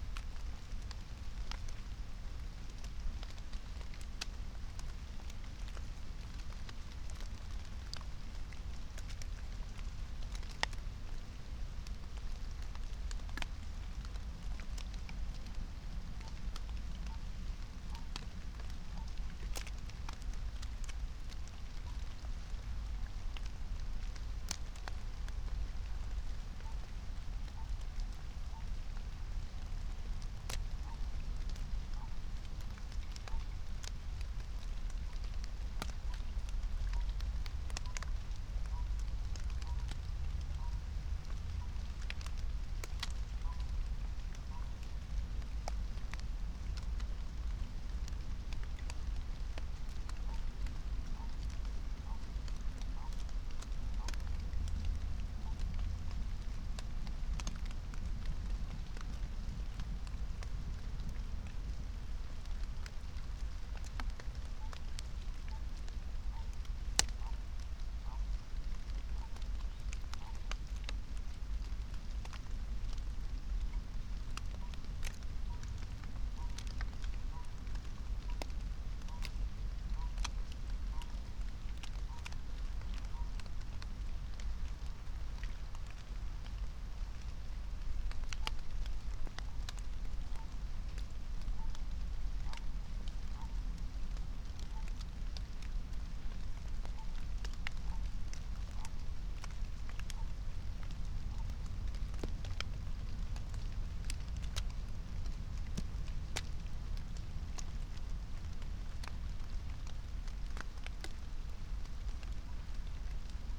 22:09 Berlin, Königsheide, Teich - pond ambience
Deutschland, 17 April 2021